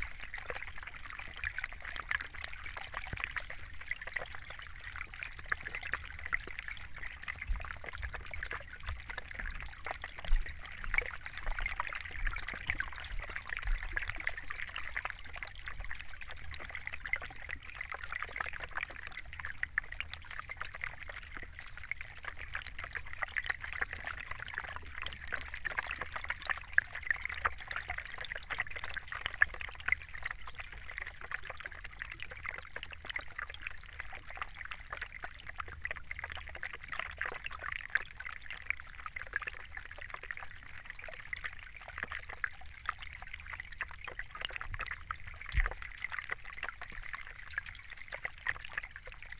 Griūtys, Lithuania, hydrophone under ice
hydrophone laying on some underwater layer of ice
1 March 2019, 15:40